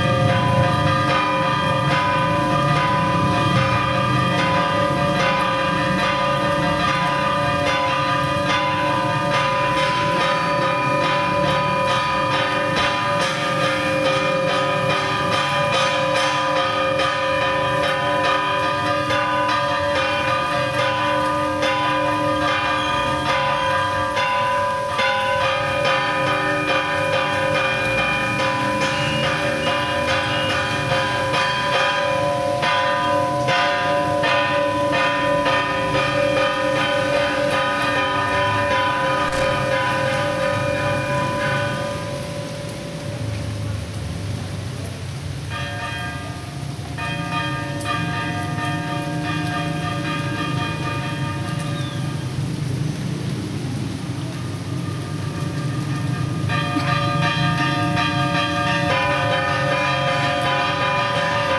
Ascensión de Guarayos, Bolivia - Campanary Church
Sound of the bells of a Church in Guarayos.
30 January 2007